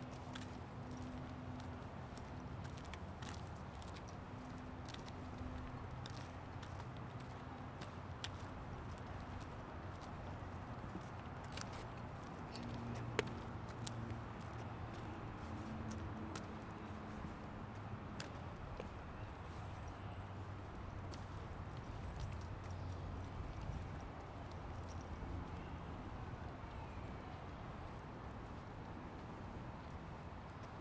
Part one of soundwalk in Woodland Park for World Listening Day in Seattle Washington.